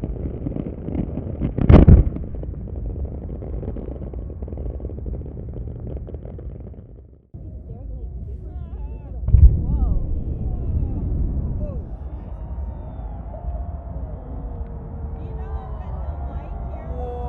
black rock city, 2007, crude awakening highlights explosion fire jet, invisisci